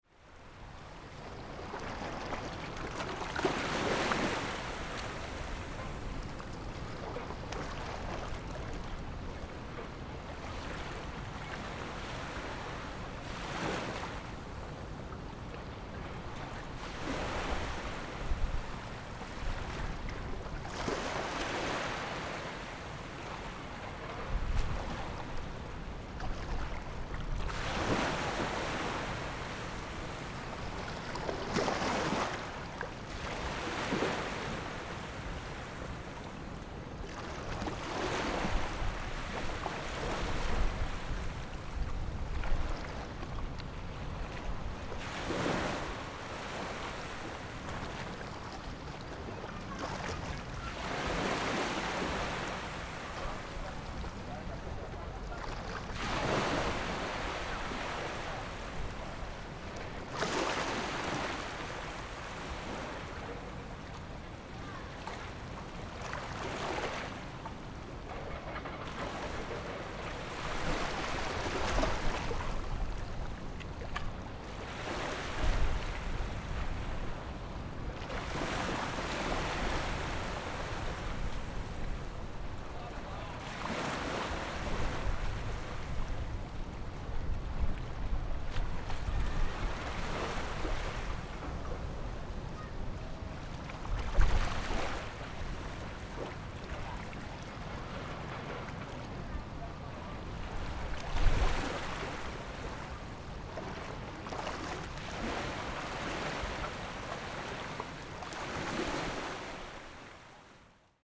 池西碼頭, Xiyu Township - Small fishing port pier
Small fishing port pier, Sound of the waves
Zoom H6+Rode NT4
Penghu County, Xiyu Township, 22 October